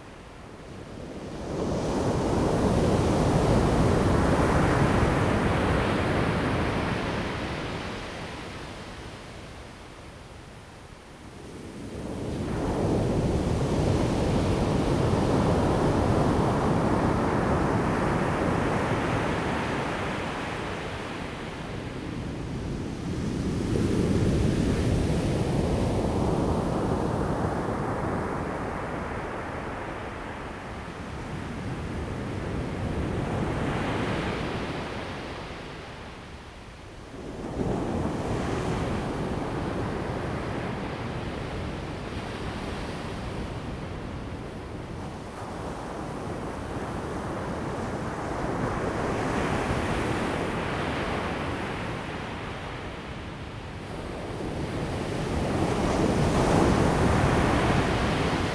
太麻里海岸, 太麻里鄉台東縣 Taiwan - Sound of the waves
Sound of the waves, Beach
Zoom H2n MS+XY
5 April, ~16:00